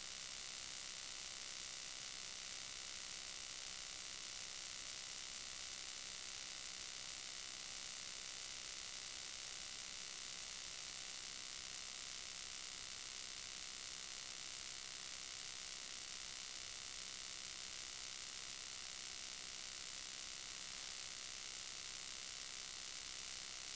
Recording from electromagnetic pickup attached to screen of electronic parking payment box.
Van Buren County, Michigan, United States, 18 July 2021